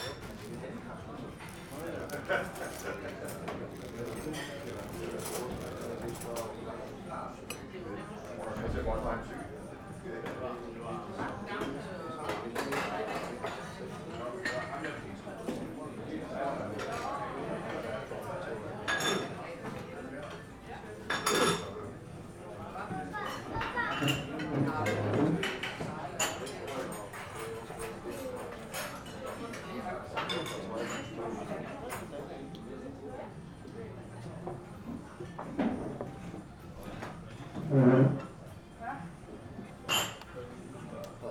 very busy restaurant on Sunday morning. hectic manager wrestling his way through the hungry crowd with the plates, apologizing for not making enough of coffee.
Berlin, Germany, December 2012